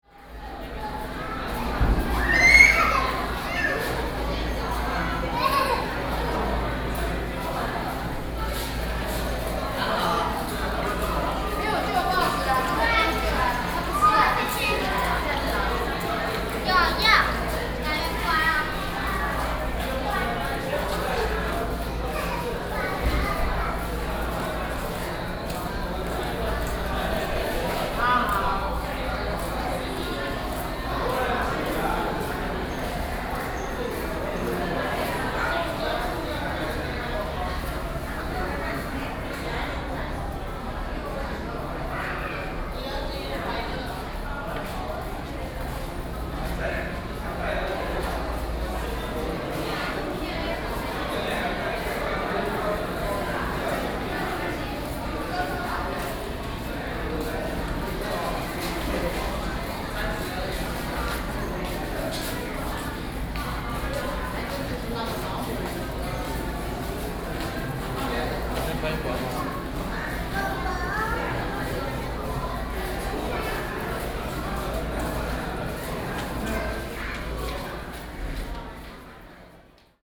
Keelung, Taiwan - inside the Visitor Center
inside the Visitor Center, Sony PCM D50 + Soundman OKM II